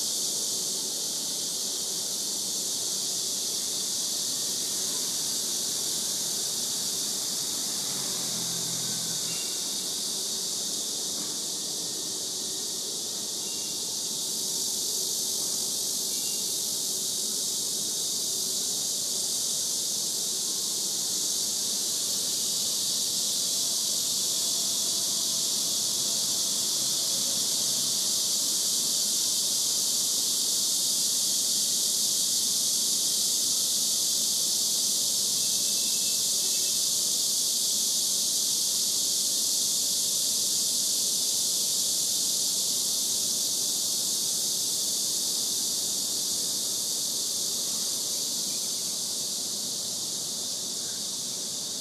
Cloud Cave, Xuhui, Shanghai, China - Cicada Concert
It's summer. And with it comes the deafening sound of Cicadas. Without it, it would not be summer. At least not here, not now.